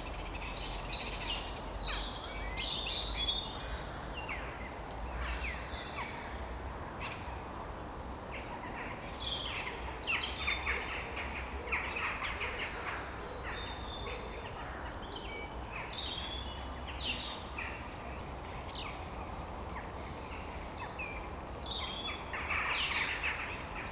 Maintenon, France - Crows war
This is the second time I put a recorder in this wood. As it's private, nobody is going here. Crows and jackdaws live on an elevated tree. Every evening, quite early, these birds talk about their day. I put a recorder, hidden, on an abandoned trunk. There's less cars than yesterday as everybody is sleeping after the too fat Christmas repast. It was the quite only and last chance to record the birds. Unfortunately, a long painful plane... This is the crows war, every early evening in winter it's like that. There's no other moment as this in daylight times, groups are dislocated in the fields, essentially to find food.